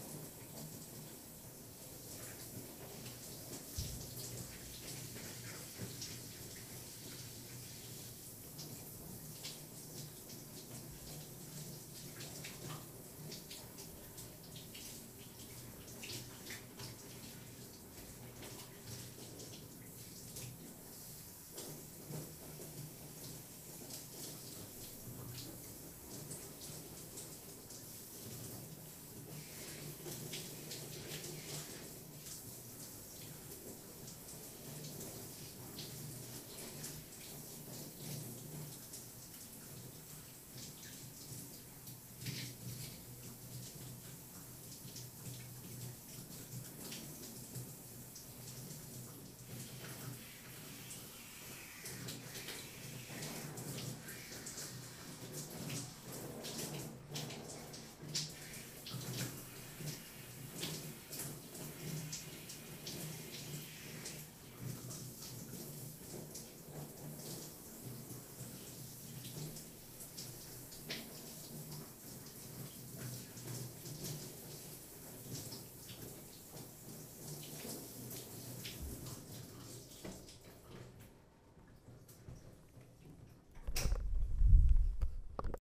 Shower rain
A thorough shower in the morning.
Berlin, Germany, 4 October 2009